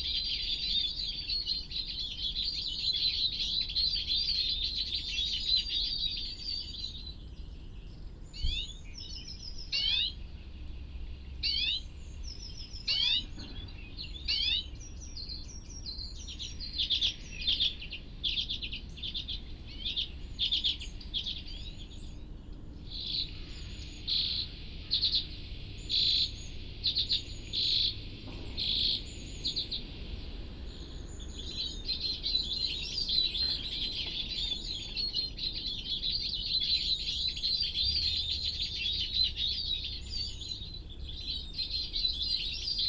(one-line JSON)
{"title": "Cleveland Way, Filey, UK - sounds at a mist net ...", "date": "2019-10-15 10:30:00", "description": "sounds at a mist net ... a bird ringing site ... a recording of a recording of a tape loop used to attract birds to the area ... parabolic ... background noise ... conversations from a dog owner ... plus the mating call of a reversing vehicle ...", "latitude": "54.22", "longitude": "-0.28", "altitude": "46", "timezone": "Europe/London"}